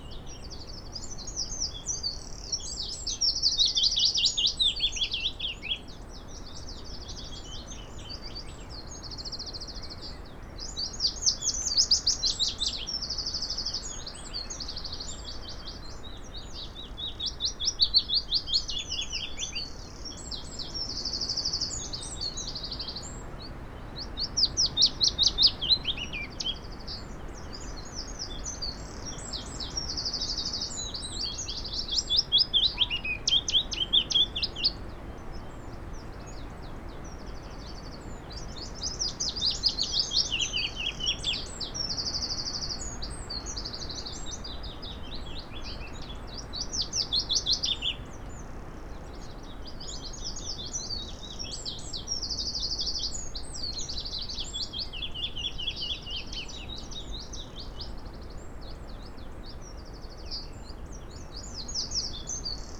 Green Ln, Malton, UK - willow warbler song soundscape ...
willow warbler soundscape ... song and calls ... xlr sass in crook of tree to zoom h5 ... bird song ... calls from ... dunnock ... blackcap ... wren ... yellowhammer ... chaffinch ... blackbird ... pheasant ... blackcap ... fieldfare ... crow ... willow warblers arrived on thursday ...